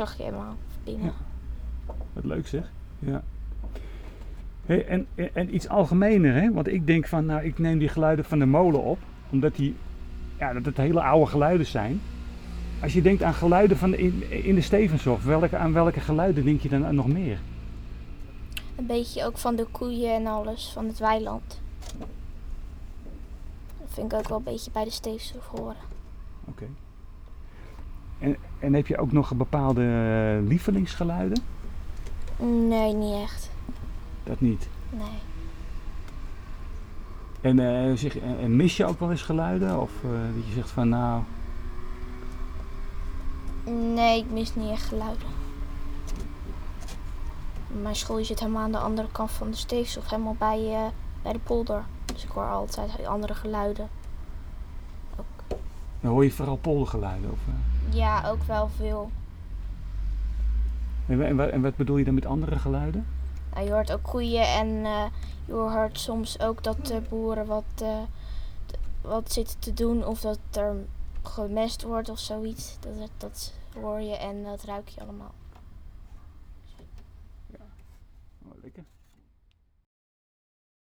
Celina is al eens in de molen geweest
Celina vertelt over de molen en geluiden bij haar school
Leiden, The Netherlands, 10 September 2011, ~15:00